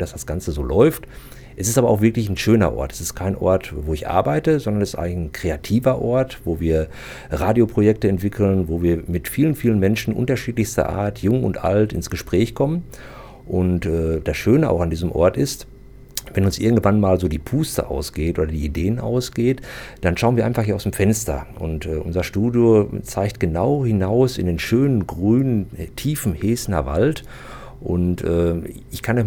St. Barbara-Klinik Hamm-Heessen, Am Heessener Wald, Hamm, Germany - Ralf Grote - radio at the edge of the forest...
We are with Ralf Grote, behind a window of the “St Barbara Hospital”… or better, one of the large windows of a Radio studio of the “Bürgerfunk” (community radio) of the city. What makes this place so special that Ralf can be found here, often on several evening of the week, after work, making radio. Ralf beginning to tell, and opens the window to the forest…
The “Radio Runde Hamm” (RRH) is an open studio, where residents can come to make Radio programmes, or learn how to do it. A group of radio-enthusiasts between 17 and 70 years old is “running the show”, assisting and training new-comers. Ralf Grote is part of this since 1999, today he’s the studio manager…
Wir stehen mit Ralf Grote an einem Fenster der “Barbaraklinik” … oder besser, des Studios der Radio Runde Hamm. Was macht diesen Ort so besonders, dass Ralf hier mehrmals in der Woche abends, nach getaner Arbeit noch hier zu finden ist…? Ralf erzählt und öffnet das Fenster zum Wald….